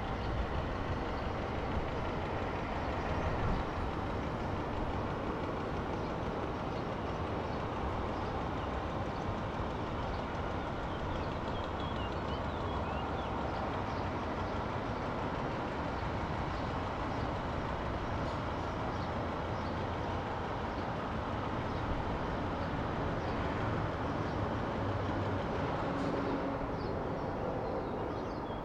lisbon, travessa do salitre - lisboa plaza hotel, terrace
terrace of the lisboa plaza hotel. lisbon is full of constructuon sets.